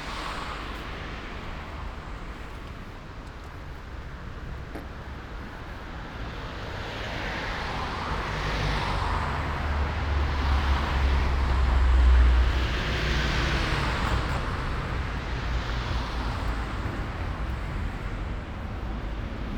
Ascolto il tuo cuore, città. I listen to your heart, city. Chapter LXXXV - Night walk round 11 p.m. but Bibe Ron is closed in the days of COVID19 Soundwalk
"Night walk round 11 p.m. but Bibe Ron is closed in the days of COVID19" Soundwalk"
Chapter CLXXIII of Ascolto il tuo cuore, città. I listen to your heart, city
Wednesday, May 19th, 2021. The first night of new disposition for curfew at 11 p.m. in the movida district of San Salvario, Turin. Walk is the same as about one year ago (go to n.85-Night walk et Bibe Ron) but this night Bibe Ron is closed. About one year and two months after emergency disposition due to the epidemic of COVID19.
Start at 10:25 p.m. end at 11:02 p.m. duration of recording 37’09”
As binaural recording is suggested headphones listening.
The entire path is associated with a synchronized GPS track recorded in the (kmz, kml, gpx) files downloadable here:
similar to 85-Night walk et Bibe Ron
Provincia di Torino, Piemonte, Italia, 19 May 2021